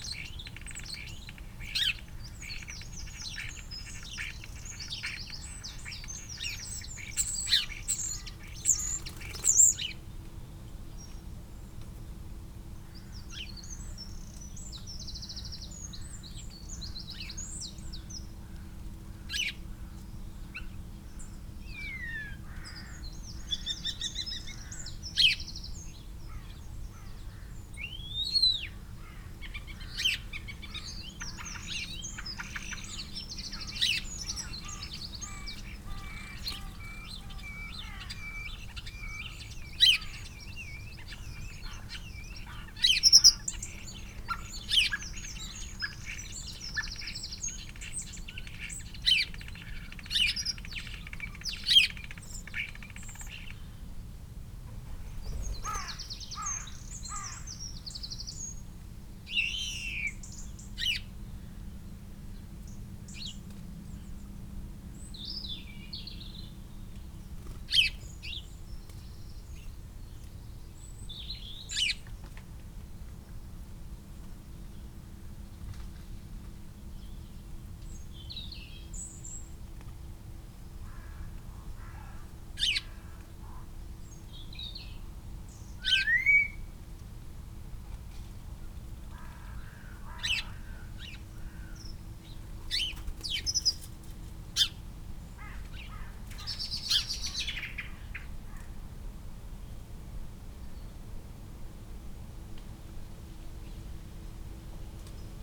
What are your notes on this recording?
Starling ... song ... calls ... mimicry ... creaking ... sqeaking ... etc ... lavalier mics clipped to sandwich box ...